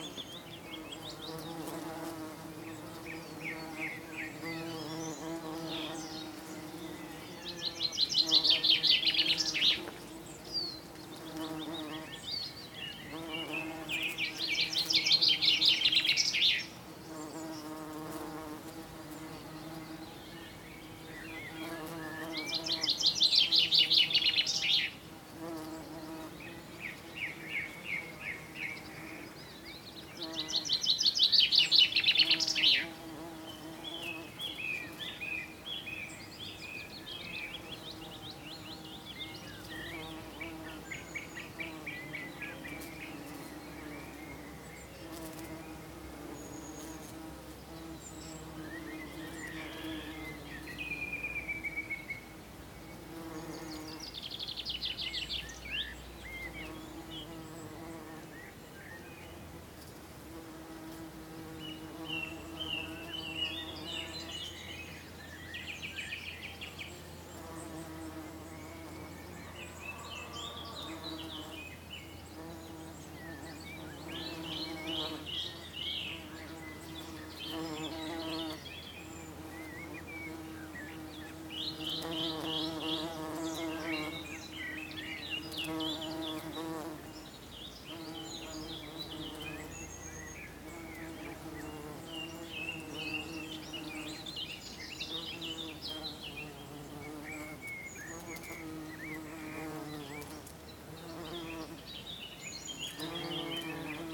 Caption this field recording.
I was sitting in the sunshine outside when I noticed the fuscia bush was humming with the sound of bees. I mounted the recorder on a large tripod and set it so the microphone was in the flowers surrounded by the bees. I don't remember exactly what time it was but it was as the sun was nearing the horizon.